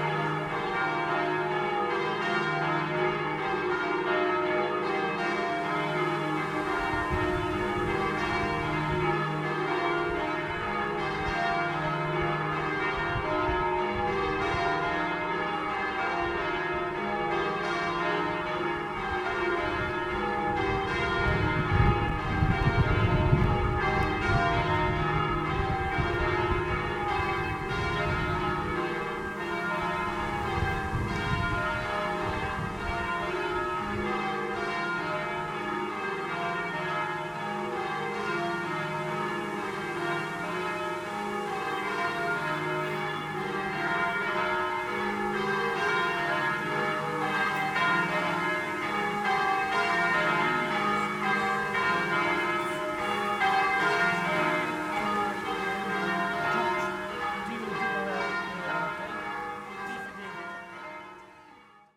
Carlisle Cathedral, Abbey St, Carlisle, UK - Bells of Carlisle Cathedral
Bells of Carlisle Cathedral, wind in nearby trees, some slight wind distortion on the microphone, people passing and chatting. Recorded with members of Prism Arts.
Cumbria, England, United Kingdom, 27 June 2022, 11:40